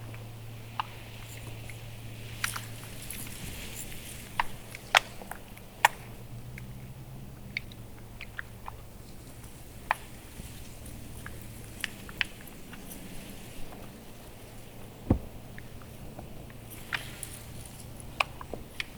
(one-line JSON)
{"title": "Turku University Botanical Garden, Turku, Finland - Water lilies crackling on a pond", "date": "2020-07-17 16:36:00", "description": "A warm day at the Turku University Botanical Garden. The numerous water lilies make a distinct crackling sound. Zoom H5 with default X/Y module. Gain adjusted and noise removed in post.", "latitude": "60.44", "longitude": "22.17", "altitude": "4", "timezone": "Europe/Helsinki"}